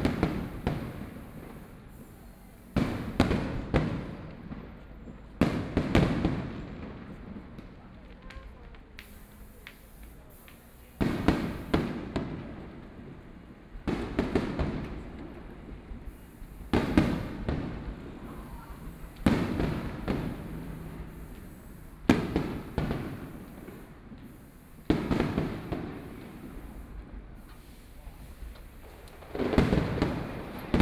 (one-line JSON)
{"title": "Neihu Rd., Taipei City - Fireworks sound", "date": "2014-04-12 20:56:00", "description": "Traditional Festivals, Fireworks sound, Traffic Sound\nPlease turn up the volume a little. Binaural recordings, Sony PCM D100+ Soundman OKM II", "latitude": "25.08", "longitude": "121.58", "altitude": "14", "timezone": "Asia/Taipei"}